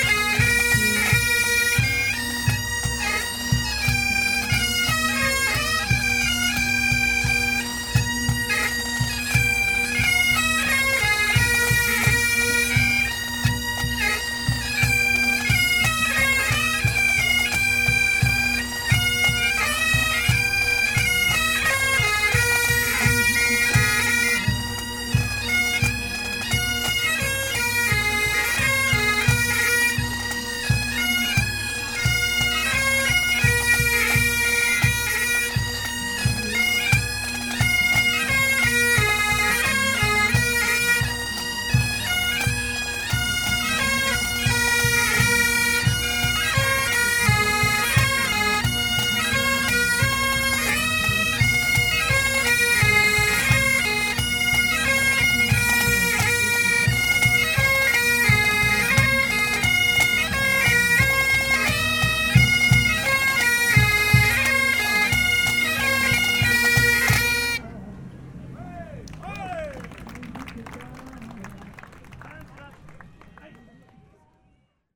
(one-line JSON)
{"title": "Mons, Belgium - K8strax race - Pipe players", "date": "2017-10-21 17:40:00", "description": "The k8strax race manager made a big surprise, he invited some pipe players. The band is Celtic Passion Pipe Band. What a strange thing to see these traditional players, between thousand of young scouts !", "latitude": "50.46", "longitude": "3.94", "altitude": "30", "timezone": "Europe/Brussels"}